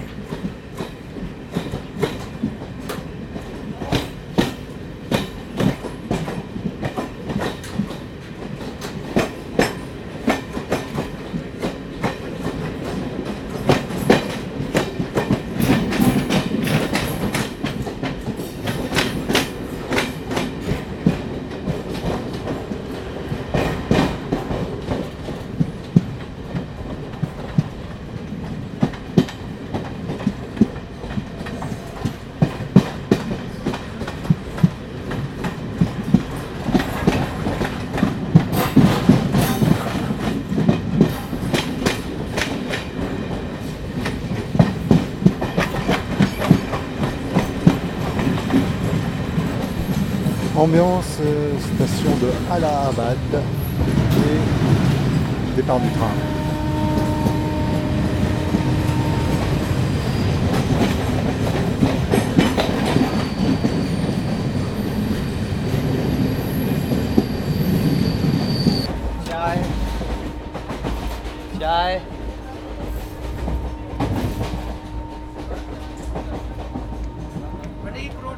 Miurabad, Prayagraj, Uttar Pradesh, Inde - Allahabad Station
Allahabad Station
Ambiance gare centrale de Allahabad